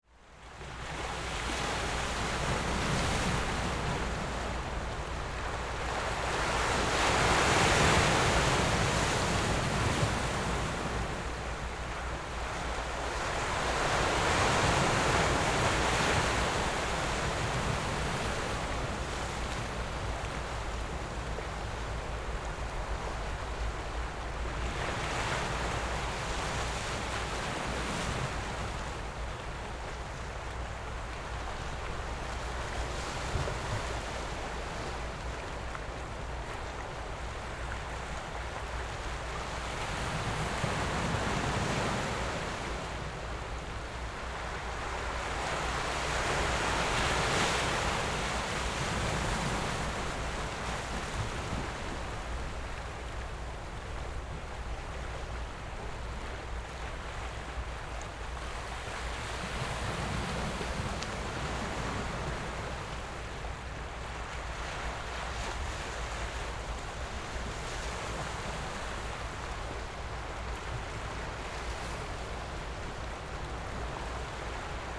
頭城鎮龜山里, Yilan County - Sitting on the rocks
Sitting on the rocks, Rocks and waves, Sound of the waves, Very hot weather
Zoom H6+ Rode NT4
Yilan County, Taiwan